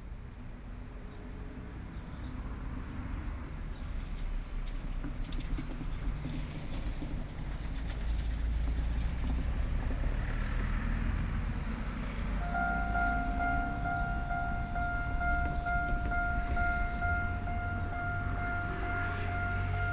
Deyang Rd., Jiaoxi Township - Trains traveling through
Traffic Sound, In the railway level crossing, Trains traveling through
Sony PCM D50+ Soundman OKM II